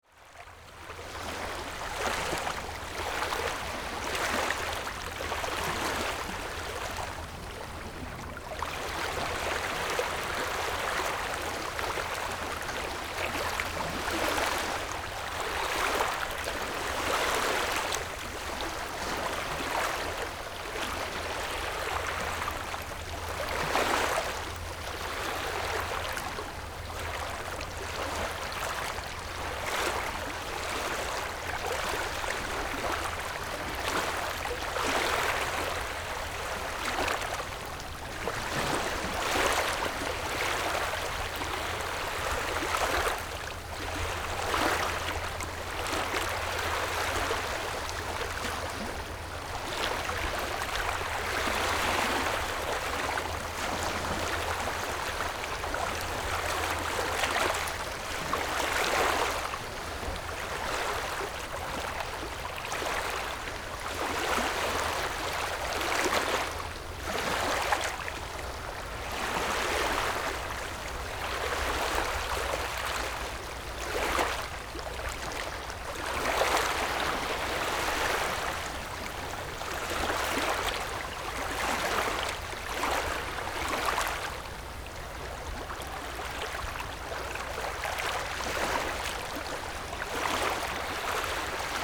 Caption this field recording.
Wave and tidal, Wind, Zoom H6 + Rode NT4